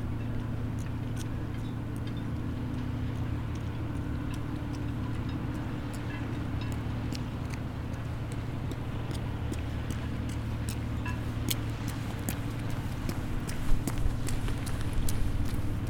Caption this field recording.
Bas Sablons Marina. Field recording of the marina from the jetty, taken with a H4n in stereo mode. Nice weather, calm and quiet sea. Motors from the ferry. Motor of a boat. A man running on the jetty. People saying hello and talking. Shrouds sounds.